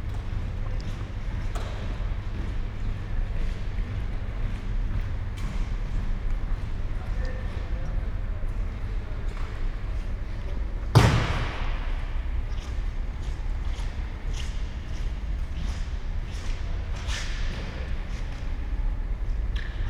Panellinios indoor hall, Athen - hall ambience, birds, a man cleaning the floor
inside Panellinios indoor sport hall. I was attracted by birdsong inside, at the open door, thus entering. After a while, a man with squeaking shoes started to clean the wooden floor.
(Sony PCM D50, Primo EM172)
Athina, Greece, 7 April 2016, ~11:00